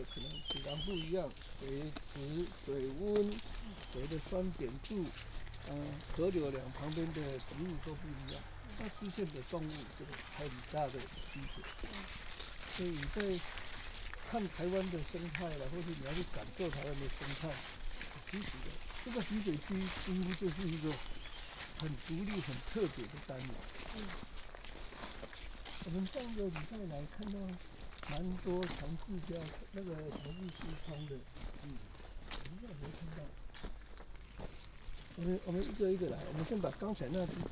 {"title": "草楠濕地, 桃米里, Nantou County - Professor of ecology tour", "date": "2016-03-26 09:13:00", "description": "in the wetlands, Bird sounds, Professor of ecology tour", "latitude": "23.95", "longitude": "120.91", "altitude": "591", "timezone": "Asia/Taipei"}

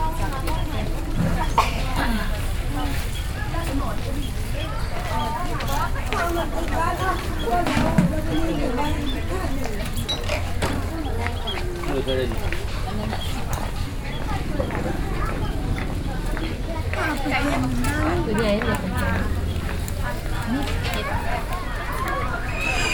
{"date": "2009-04-22 08:15:00", "description": "Luang Prabang, Laos, morning market.\nLuang Prabang, au laos, la traversée matinale dun marché.", "latitude": "19.89", "longitude": "102.13", "altitude": "297", "timezone": "Asia/Vientiane"}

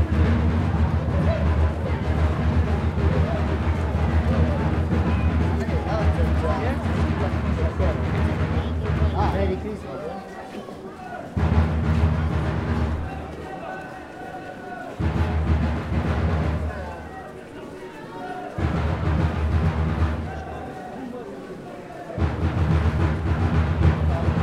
{"title": "Strada Republicii, Brașov, Romania - 2016 Christmas in Brasov - Drums and Bears", "date": "2020-12-25 17:45:00", "description": "There is an old tradition on Christmas in Romania where in rural villages young people would dress as bears and do a ritual most probably of pagan origins, going from house to house doing chants and rhythms. Nowadays, in cities there are people who only pretend to re-enact this ritual, dressing with poor imitations and very low musical sense, if any. They beat some makeshift drums with the same rhythm, say some rhymes that don't have much sense but most importantly expect passers-by to throw them money for the \"show\". You can hear the drums getting louder as they slowly approach from the side. Recorded with Superlux S502 Stereo ORTF mic and a Zoom F8 recorder.", "latitude": "45.64", "longitude": "25.59", "altitude": "591", "timezone": "Europe/Bucharest"}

